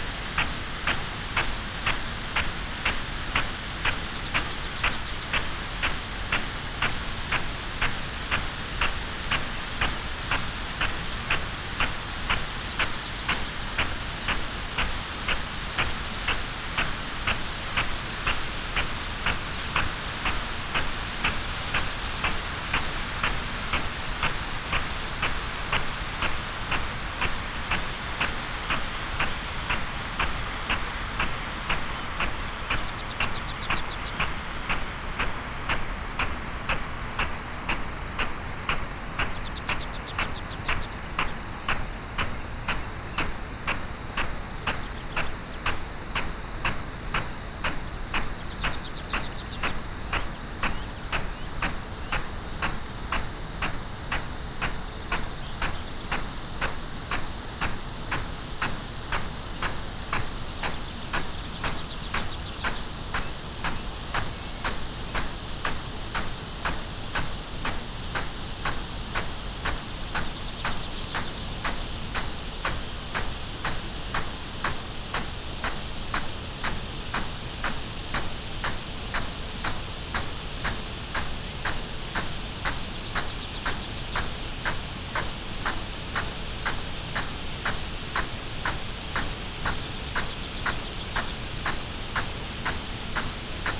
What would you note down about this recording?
field irrigation system ... xlr SASS to Zoom F6 ... a Bauer SR 140 ultra sprinkler to Bauer Rainstart E irrigation system ... SASS on the ground ... the sprinkler system gradually gets pulled back to the unit so it is constantly moving ...